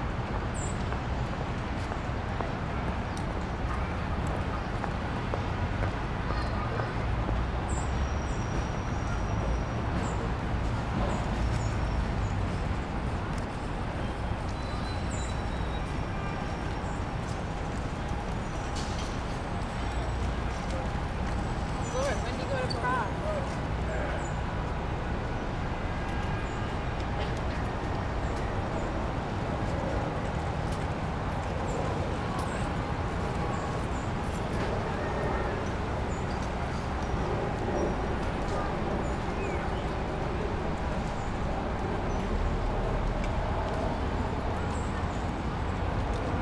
field recording from 2003 using a mini disc recorder and my (then new) audio-technica stereo mic